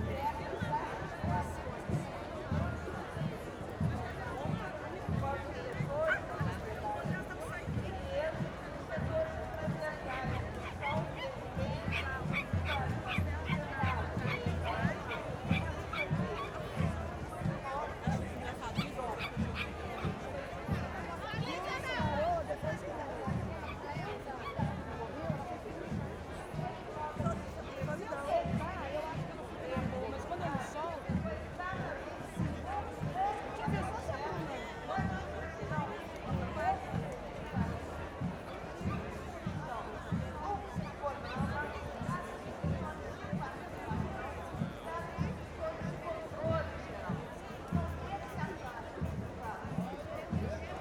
Praça do Papa, Belo Horizonte - Demonstration in Brazil to preserve the Amazonian forest
In Belo Horizonte at "Praza do Papa" on last Sunday, people doing a demonstration to preserve the forest. Voices of the crowd, somebody talking on microphone and some drums on the left.
Recorded with an ORTF setup Schoeps CCM4x2
On a MixPre6 Sound Devices
Sound Ref: BR-190825-02
GPS: -19.955654, -43.914702
25 August 2019, 11:30